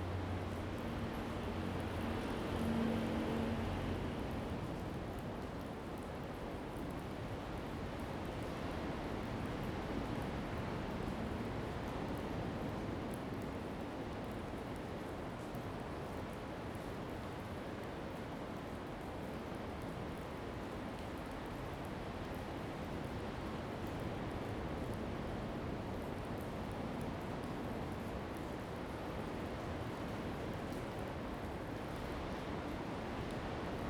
Jizazalay, Ponso no Tao - inside the giant cave
inside the giant cave, sound of the waves
Zoom H2n MS +XY